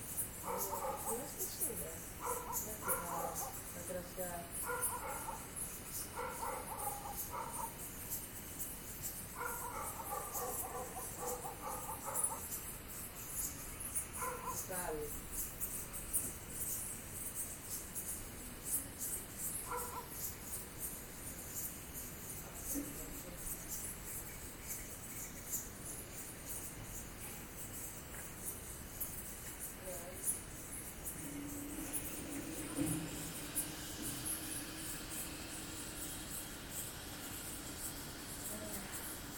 {"title": "Unnamed Road, Mavrommati, Greece - Night dogs and Jackals", "date": "2018-06-01 12:58:00", "description": "Night sounds: Insects, dogs and distant Jackals", "latitude": "37.18", "longitude": "21.92", "altitude": "383", "timezone": "Europe/Athens"}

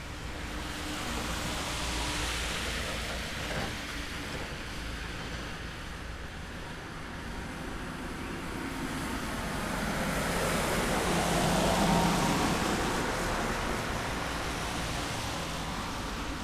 {"title": "Innstraße, Innsbruck, Österreich - Morgenstimmung vor dem Haus mit Regen", "date": "2017-04-06 21:14:00", "description": "vogelweide, waltherpark, st. Nikolaus, mariahilf, innsbruck, stadtpotentiale 2017, bird lab, mapping waltherpark realities, kulturverein vogelweide, morgenstimmung vogelgezwitscher, autos auf nasser fahrbahn", "latitude": "47.27", "longitude": "11.39", "altitude": "577", "timezone": "Europe/Vienna"}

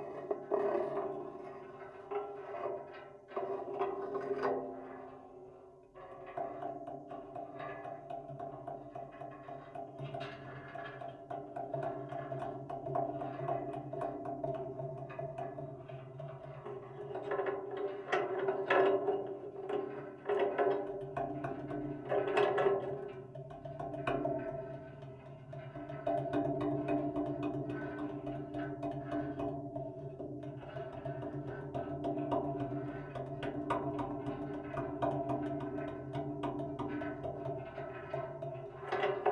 {"title": "Corfu, Greece - Sound exploration no.1: Old Fortress, Corfu Island", "date": "2022-07-29 13:28:00", "description": "Record made by: Alex and Konstantina", "latitude": "39.62", "longitude": "19.93", "altitude": "13", "timezone": "Europe/Athens"}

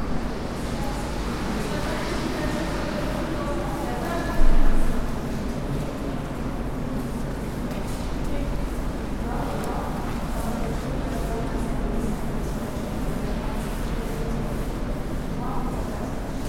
{"title": "Zürich West, Schweiz - Bahnhof Hardbrücke, Halle", "date": "2014-12-30 21:33:00", "description": "Bahnhof Hardbrücke, Zürich, Halle", "latitude": "47.39", "longitude": "8.52", "altitude": "409", "timezone": "Europe/Zurich"}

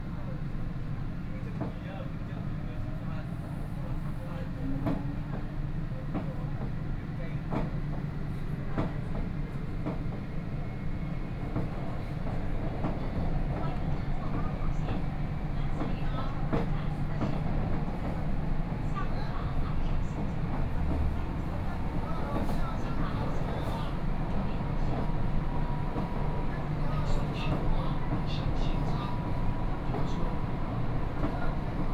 {"title": "Kongjiang Road, Yangpu District - Line 8 (Shanghai Metro)", "date": "2013-11-26 14:58:00", "description": "from Middle Yanji Road Station to Anshan Xincun Station, Binaural recording, Zoom H6+ Soundman OKM II", "latitude": "31.28", "longitude": "121.52", "altitude": "17", "timezone": "Asia/Shanghai"}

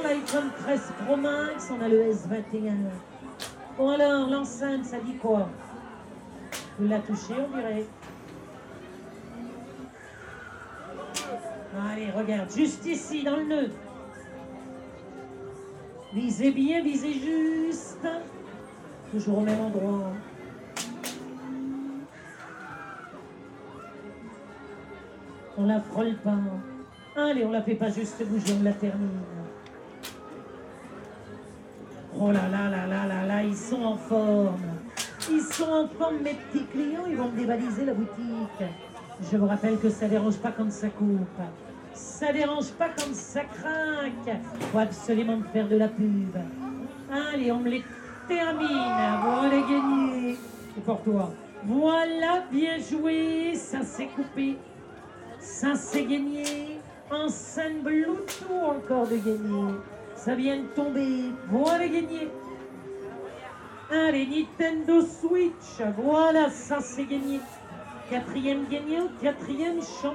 {"title": "Esplanade, Saint-Omer, France - St-Omer - ducasse", "date": "2022-02-26 16:00:00", "description": "St-Omer (Nord)\nDucasse - fête foraine\nAmbiance - extrait 1\nFostex FR2 + AudioTechnica BP4025", "latitude": "50.75", "longitude": "2.25", "altitude": "13", "timezone": "Europe/Paris"}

